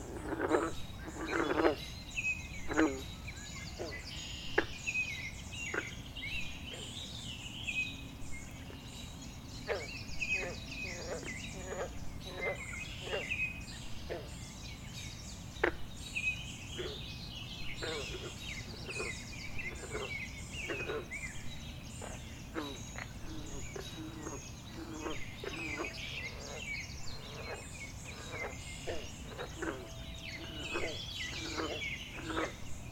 Taylor Creek Trail, Toronto, ON, Canada - Taylor Creek Frogs
Recorded by a small swampy area on the opposite side of the recreational path next to Massey Creek in the Taylor Creek park system, in East York, Toronto, Ontario, Canada. This is an excerpt from a 75 minute recording of the dawn chorus on this date.